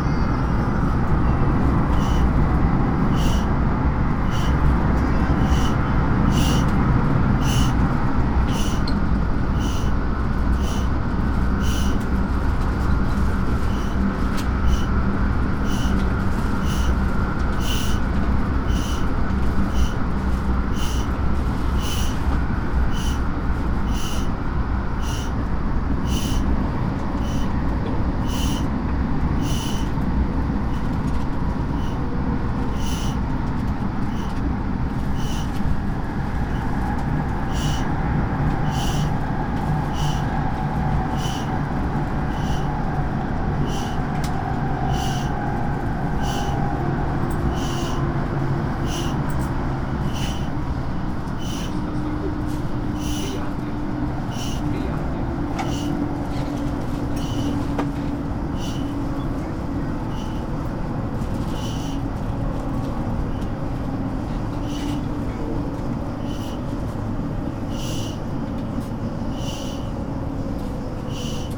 Öresundsbron, Sweden - Øresund train
Into the Øresund train, called Öresund in swedish. This is a train which begins from Copenhagen (Denmark) and goes to Malmö (Sweden). The train rides into a tunnel in Denmark and 'into' a bridge in Sweden. This recording is the end of the course, arriving in Malmö.